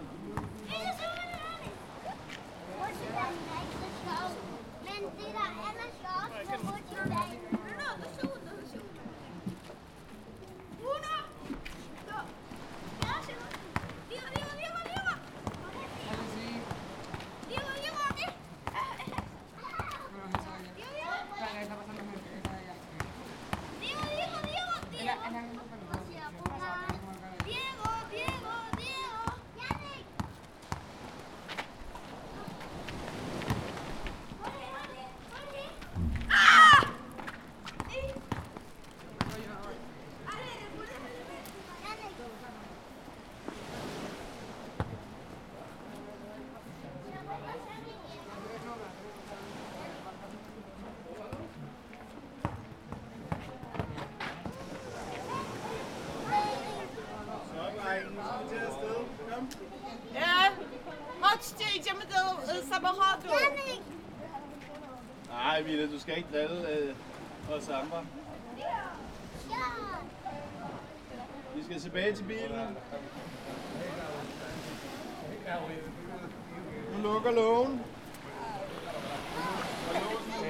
Pasaje Puertito Sau, El Puertito, Santa Cruz de Tenerife, Hiszpania - Nightfall at El Puertito
Everybody already left the beach. Two girls are still dancing. Kids don't want to go back home.
10 February 2019, ~18:00